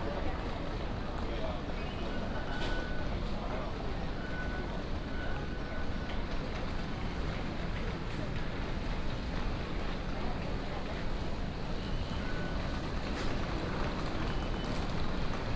Taipei, Taiwan - Transhipment hall
Transhipment hallㄝ at the station